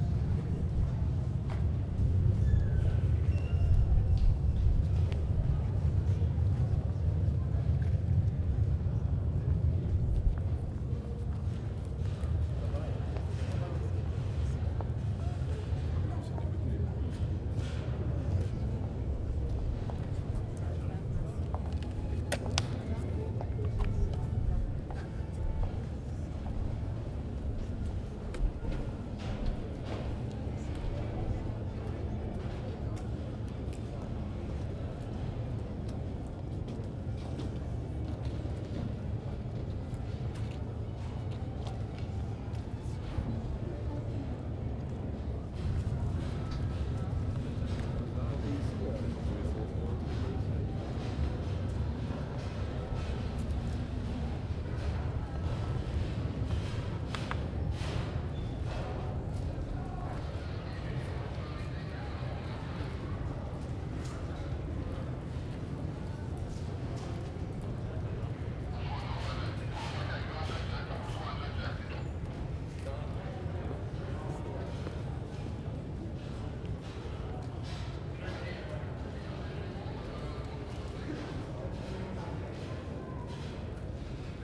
Chaillot, Paris, France - Palais de Tokyo
Walking around the still half finished new areas at the Palais de Tokyo during the 30 hr non-stop exhibition.
15 April 2012